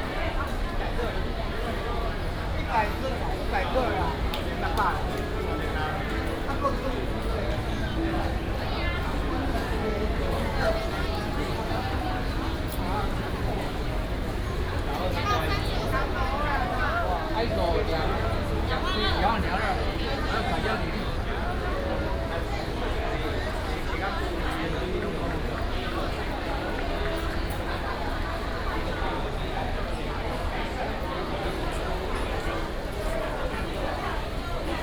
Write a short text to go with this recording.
Agricultural products market, Traffic sound